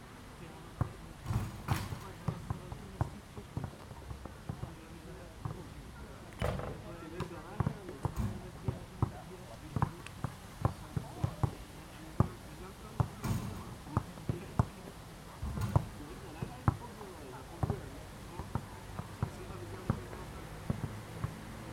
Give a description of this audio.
People playing basketball and chatting, fountain in the background. Zoom H2n, 2CH, held in hand.